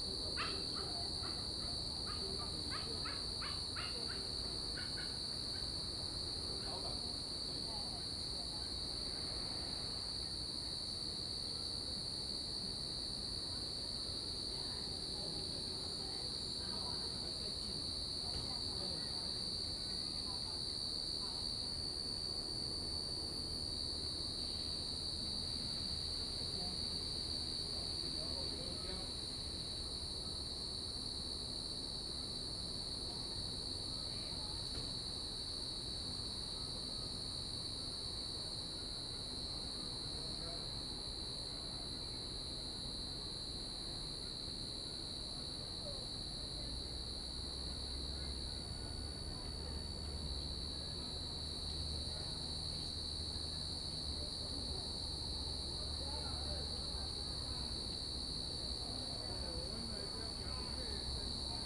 台東森林公園, Taitung City - Insects sound
Insects sound, Evening in the park, Dogs barking
Zoom H2n MS+ XY
Taitung City, Taitung County, Taiwan